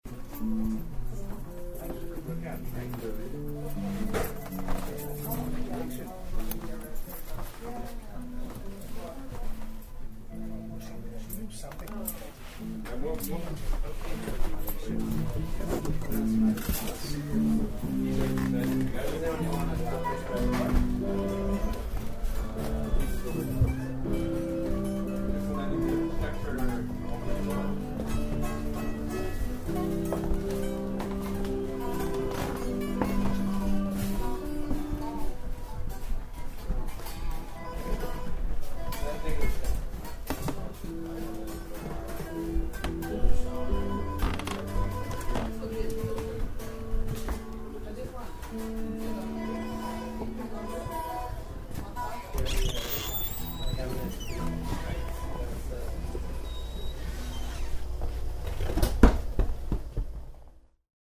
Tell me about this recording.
equipment used: Edirol R-09, Interior - music shop, Rue St. Antoine Ouest